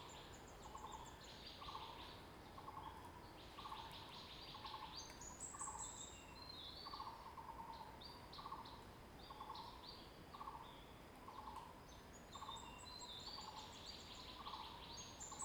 {
  "title": "Lane 水上, Puli Township 桃米里 - Birds",
  "date": "2016-04-26 05:33:00",
  "description": "Birds singing, face the woods\nZoom H2n MS+ XY",
  "latitude": "23.94",
  "longitude": "120.91",
  "altitude": "639",
  "timezone": "Asia/Taipei"
}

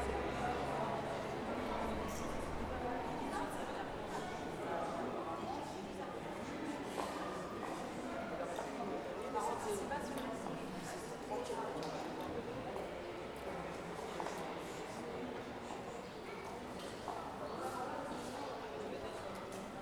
{
  "title": "Passage des Étuves, Saint-Denis, France - Outside the Courthouse",
  "date": "2019-05-27 11:50:00",
  "description": "This recording is one of a series of recording mapping the changing soundscape of Saint-Denis (Recorded with the internal microphones of a Tascam DR-40).",
  "latitude": "48.94",
  "longitude": "2.36",
  "altitude": "34",
  "timezone": "Europe/Paris"
}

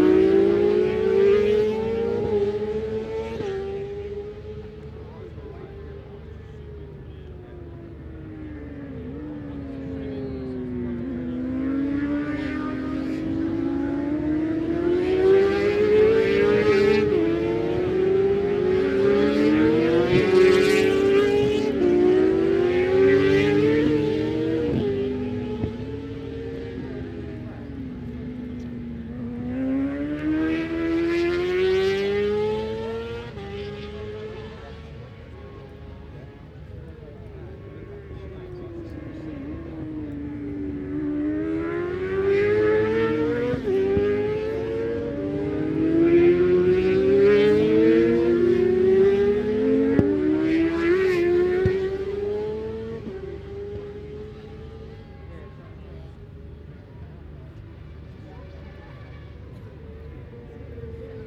{
  "title": "Unit 3 Within Snetterton Circuit, W Harling Rd, Norwich, United Kingdom - british superbikes 2005 ... supersports qualifying ...",
  "date": "2005-07-09 15:10:00",
  "description": "british superbikes ... supersports 600s qualifying ... one point stereo mic to minidisk ... time appproximate ...",
  "latitude": "52.46",
  "longitude": "0.95",
  "altitude": "41",
  "timezone": "Europe/London"
}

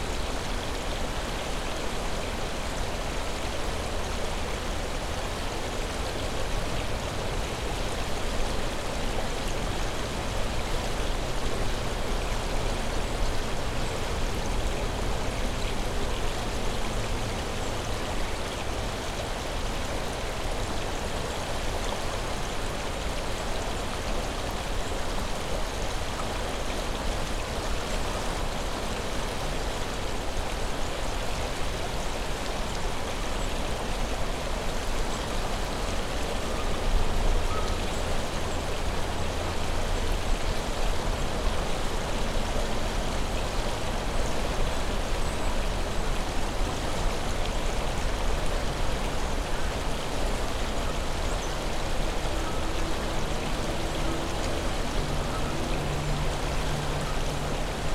{
  "title": "Powers Island Hiking Trail, Sandy Springs, GA, USA - On the side of the trail",
  "date": "2021-01-10 15:58:00",
  "description": "A recording made at the side of Powers Island Trail facing the river. The sound of water is very prominent. Other sounds are present, such as other hikers behind the recorder and some geese.\n[Tascam DR-100mkiii & Clippy EM-272s]",
  "latitude": "33.91",
  "longitude": "-84.45",
  "altitude": "251",
  "timezone": "America/New_York"
}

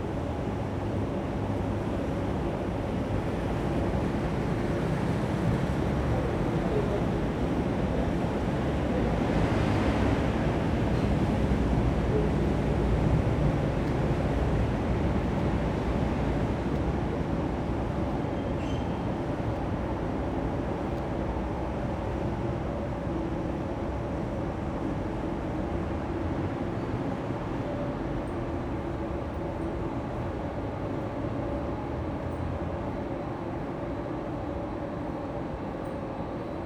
{
  "title": "Walk through air conditioning alley (Kennet Wharf Lane) to the river, Vintners Place, Upper Thames St., London, UK - Walk through air conditioning alley to the river",
  "date": "2022-05-17 12:05:00",
  "description": "On this walk, along Kennet wharf Lane, to the river one is enclosed by the industrial building on either side and immersed in sound from a succession of air conditioning outlets. When reaching the river bank loudspeakers can be heard. It is a guide on a passing tourist boat talking about the historic sights in view.",
  "latitude": "51.51",
  "longitude": "-0.09",
  "altitude": "21",
  "timezone": "Europe/London"
}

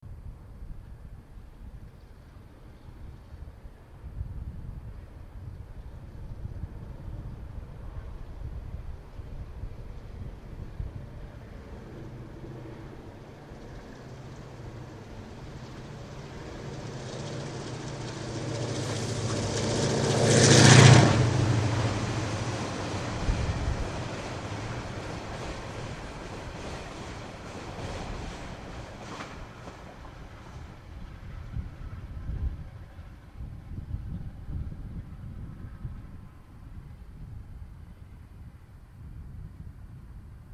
{
  "title": "Montreal: Grand boulevard walking overpass (NDG) - Grand boulevard walking overpass (NDG)",
  "date": "2009-05-29 20:45:00",
  "description": "equipment used: marantz\nTrain pssing under the Grand boulevard walking overpass",
  "latitude": "45.47",
  "longitude": "-73.62",
  "altitude": "60",
  "timezone": "America/Montreal"
}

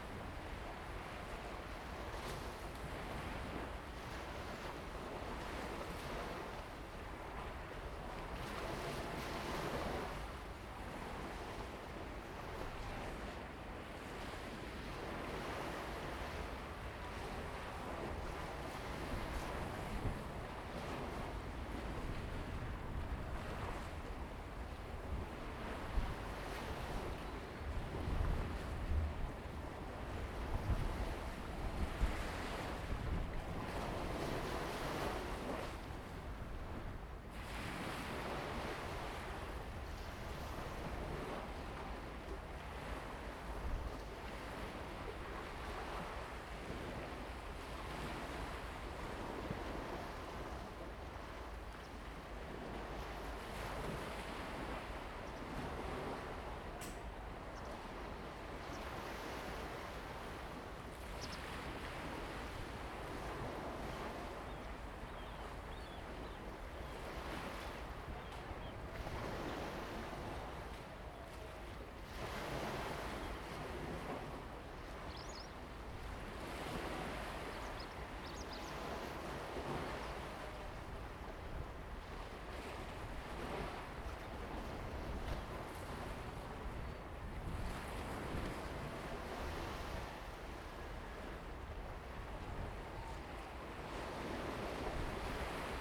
{
  "title": "湖下海堤, Jinning Township - In mentioning the shore",
  "date": "2014-11-03 06:52:00",
  "description": "In mentioning the shore, sound of the waves, Crowing sound\nZoom H2n MS+XY",
  "latitude": "24.46",
  "longitude": "118.30",
  "altitude": "4",
  "timezone": "Asia/Taipei"
}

